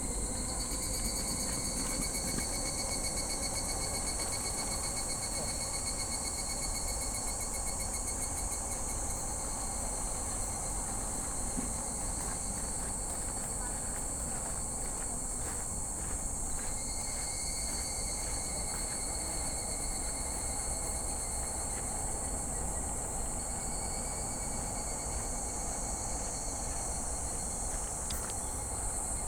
{"title": "Yoyogikamizonochō, Shibuya-ku, Tōkyō-to, Япония - Meiji Shrine Gyoen 2", "date": "2016-07-28 15:40:00", "latitude": "35.68", "longitude": "139.70", "altitude": "49", "timezone": "Asia/Tokyo"}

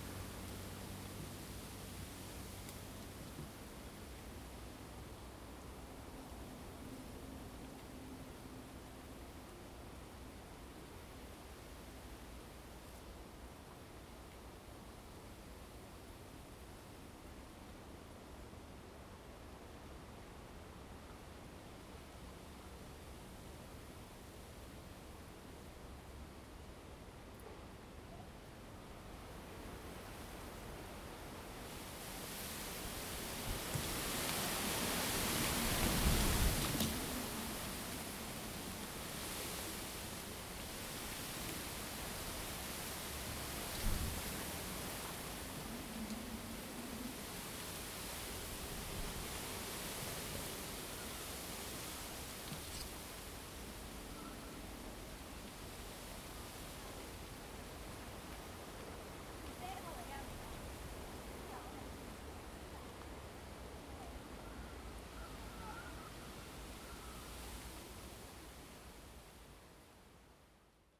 {"title": "Ln., Sec., Zhonghua Rd., Xiangshan Dist., Hsinchu City - Wind and bamboo forest", "date": "2017-08-30 12:55:00", "description": "Wind and bamboo forest, Next to the tracks, The train passes by, Zoom H2n MS+XY", "latitude": "24.76", "longitude": "120.91", "altitude": "8", "timezone": "Asia/Taipei"}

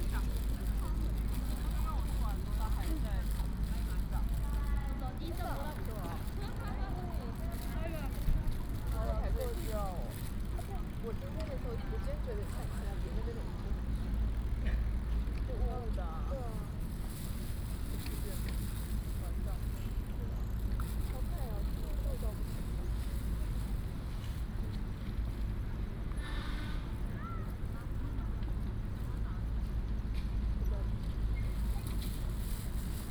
{"title": "Main library, National Taiwan University - In the Plaza", "date": "2016-03-04 17:50:00", "description": "in the university, In the Plaza, Traffic Sound, Bicycle sound", "latitude": "25.02", "longitude": "121.54", "altitude": "17", "timezone": "Asia/Taipei"}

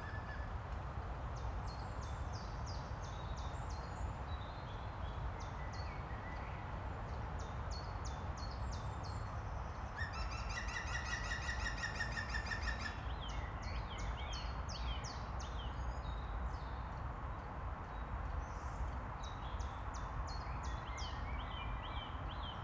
{"title": "Braník woodland, green woodpecker, buzzards, traffic, Nad Údolím, Praha, Czechia - Braník woodland - green woodpecker, buzzards, traffic", "date": "2022-04-09 10:19:00", "description": "The hillside above Braník is woodland with tall trees and paths for jogging and dog walking. A reasonable variety of birds live there - woodpeckers, buzzards, nuthatches - their songs and calls mixing with the constant sound of traffic from the valley below. Planes roar overhead as they come in to land at Prague airport. This soundscape is very weather dependent. Wind direction particularly has a large effect on loudness of traffic noise and its mix with the woodland sounds. On this track a green woodpecker laughs, a chiffchaff, distant robin, buzzards and nuthatches are heard. Right at the end a local train blows its whistle before leaving Braník station.", "latitude": "50.03", "longitude": "14.41", "altitude": "228", "timezone": "Europe/Prague"}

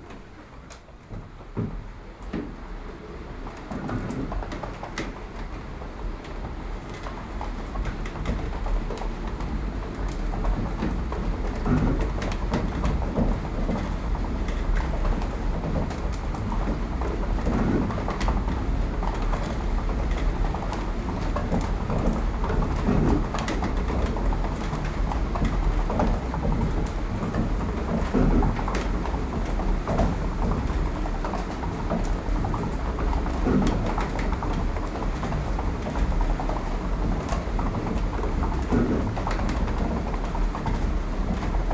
{"title": "de Stevenshofjesmolen gaat malen", "date": "2011-07-09 15:06:00", "description": "het remmen (vangen), het aankoppelen van het rad, het vervang er af (de remmen los) en het malen\nthe windmill is connected for turning the water", "latitude": "52.15", "longitude": "4.44", "altitude": "1", "timezone": "Europe/Amsterdam"}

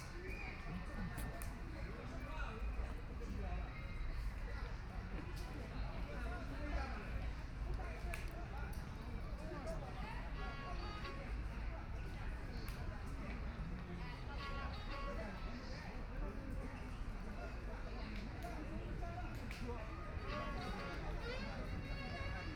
{"title": "和平公園虹口區 - woman singing", "date": "2013-11-23 10:55:00", "description": "A woman is singing the corner, A lot of people are playing cards behind, Binaural recording, Zoom H6+ Soundman OKM II", "latitude": "31.27", "longitude": "121.50", "altitude": "13", "timezone": "Asia/Shanghai"}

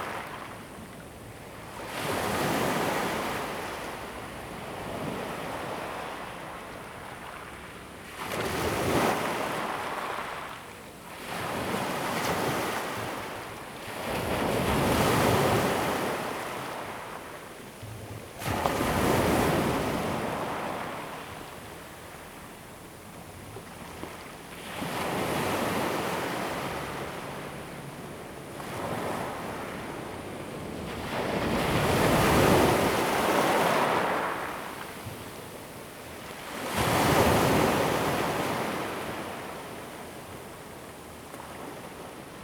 {"title": "新社村, Fengbin Township - Small pier", "date": "2014-08-28 16:15:00", "description": "Small pier, Sound of the waves, Very Hot weather\nZoom H2n MS+XY", "latitude": "23.66", "longitude": "121.54", "altitude": "7", "timezone": "Asia/Taipei"}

